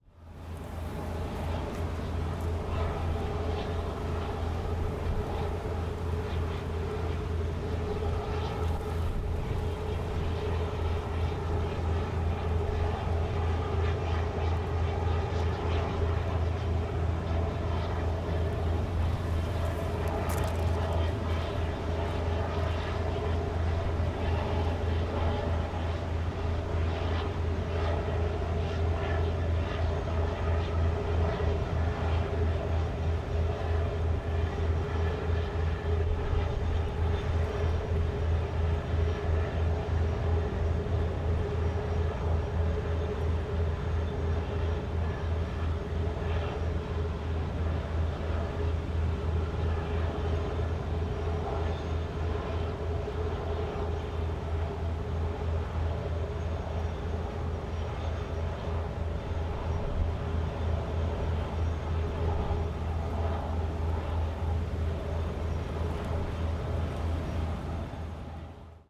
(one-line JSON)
{"title": "Cliff Cave Park, Oakville, Missouri, USA - Mississippi River Towboat", "date": "2022-02-21 14:34:00", "description": "Recording of towboat passing on Mississippi River.", "latitude": "38.46", "longitude": "-90.29", "altitude": "124", "timezone": "America/Chicago"}